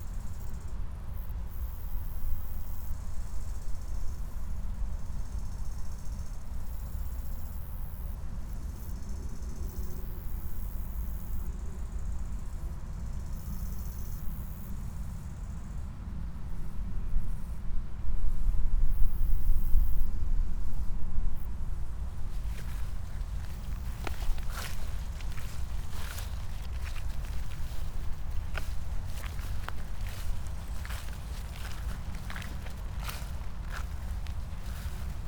{"title": "path of seasons, september meadow, piramida - grasshoppers, late crickets, high grass", "date": "2014-09-18 14:20:00", "latitude": "46.57", "longitude": "15.65", "altitude": "376", "timezone": "Europe/Ljubljana"}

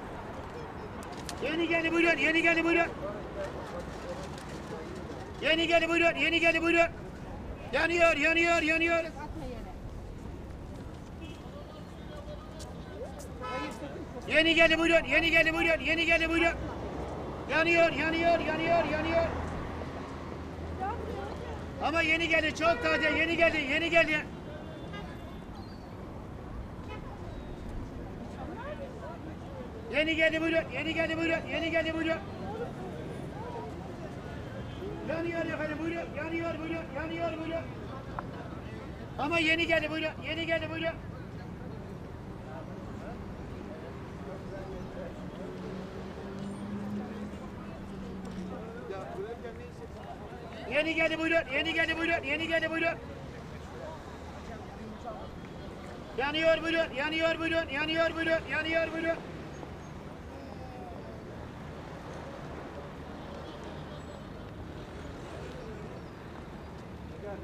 {"title": "Eminonu - street pastry seller", "date": "2011-02-19 11:00:00", "description": "Street pastry seller announces his fresh and new products / Sokak saticisi yeni gelen pogaca ve simitlerini satiyor", "latitude": "41.02", "longitude": "28.97", "timezone": "Europe/Istanbul"}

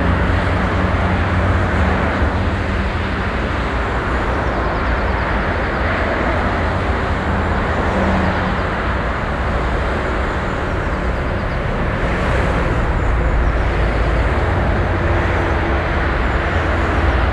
{"title": "Südostviertel, Essen, Deutschland - essen, pedestrian bridge across highway A40", "date": "2014-04-09 07:10:00", "description": "In the morning time on a pedestrian bridge that leads acros the highway A40.\nThe sound of traffic.\nMorgens auf einer Fussgängerbrücke die hier die Autobahn A 40 kreuzt. Der Klang des Verkehrs.\nProjekt - Stadtklang//: Hörorte - topographic field recordings and social ambiences", "latitude": "51.45", "longitude": "7.03", "altitude": "106", "timezone": "Europe/Berlin"}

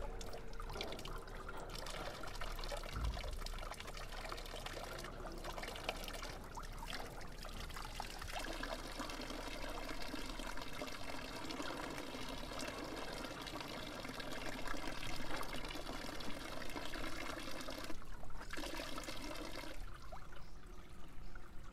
{"title": "Sins, Scuol, Schweiz - Am Zentralbrunnen", "date": "2015-08-13 12:03:00", "latitude": "46.82", "longitude": "10.34", "altitude": "1432", "timezone": "Europe/Zurich"}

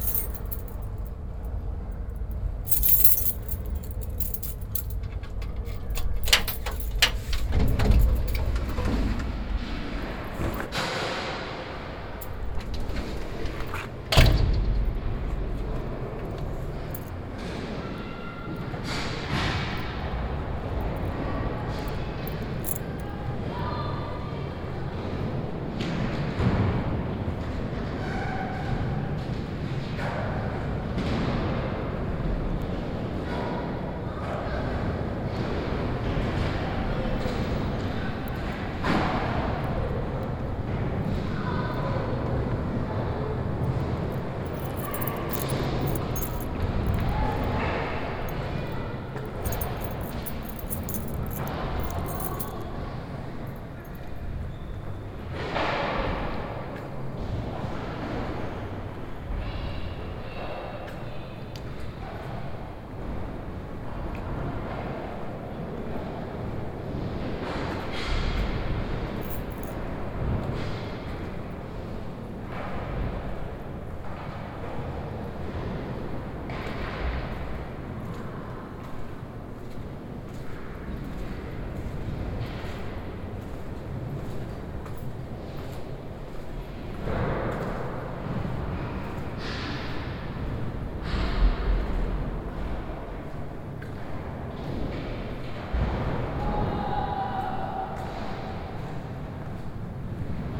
{"title": "WLD atelier in NDSM yard hall", "date": "2010-07-18 17:15:00", "description": "short visiting my atelier in the monumental NDSM yard building; because it is a hot sunday there are not much artists, the scaters in the indoor scating hall has taken over the sounds in the mainhall; entering my atelier and hearing the playback of a part of a soundscape \"the animal shop\" mixed with ateliersounds", "latitude": "52.40", "longitude": "4.90", "altitude": "-1", "timezone": "Europe/Amsterdam"}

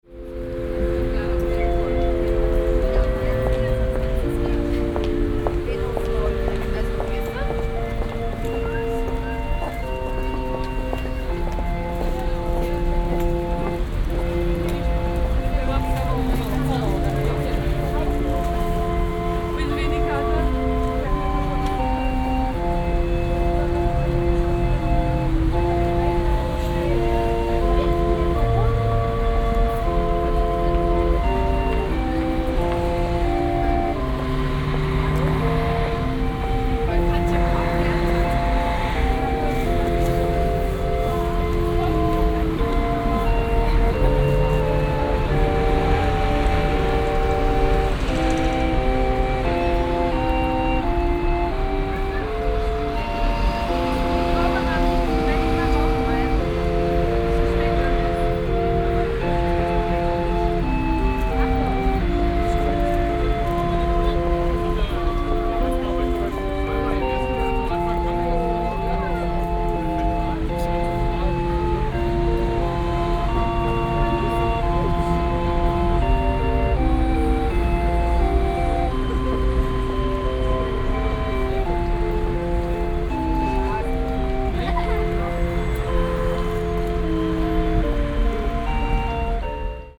{"title": "berlin, kudamm, street organ", "date": "2008-12-23 14:00:00", "description": "23.12.2008 14:00, street organ, unfriendly corner at kurfürstendamm, berlin, christmas crowds", "latitude": "52.50", "longitude": "13.33", "altitude": "33", "timezone": "Europe/Berlin"}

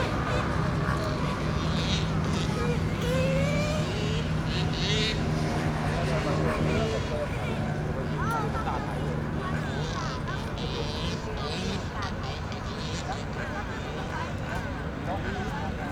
{"title": "Erchong Floodway, New Taipei City - Remote control car", "date": "2012-02-12 16:43:00", "description": "Remote control car, Zoom H4n+Rode NT4", "latitude": "25.07", "longitude": "121.46", "altitude": "7", "timezone": "Asia/Taipei"}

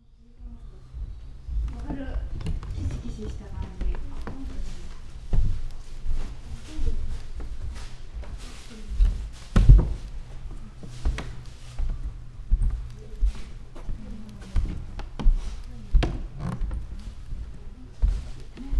{"title": "wooden floor, Tofukuji gardens, Kyoto - walking", "date": "2014-11-05 13:15:00", "description": "gardens sonority, from veranda, steps", "latitude": "34.98", "longitude": "135.77", "altitude": "55", "timezone": "Asia/Tokyo"}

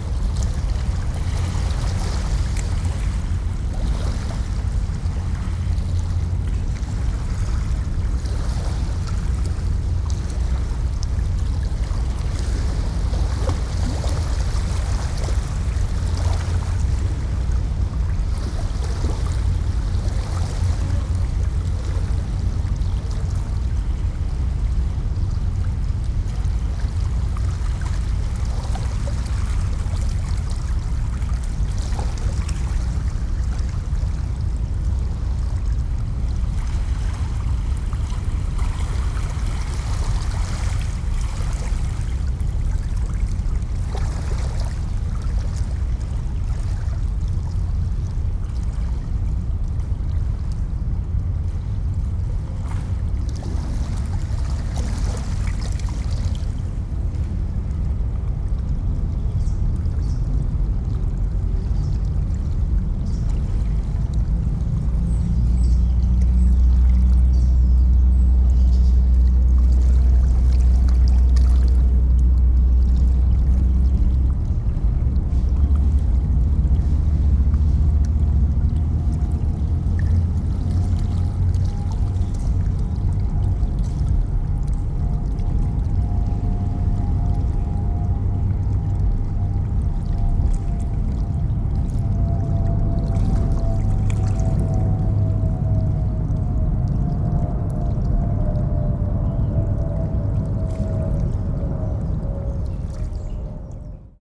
{"title": "Greenwich, UK - Between Greenwich Power Plant & the Thames", "date": "2017-01-10 17:10:00", "description": "Recorded with a pair of DPA 4060s and a Marantz PMD661.", "latitude": "51.49", "longitude": "0.00", "altitude": "8", "timezone": "GMT+1"}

